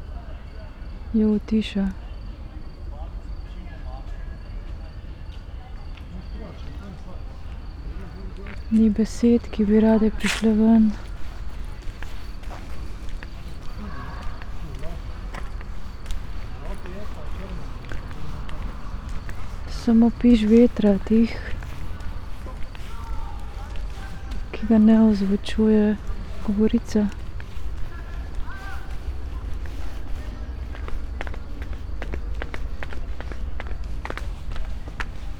sonopoetic path, maribor - walking poem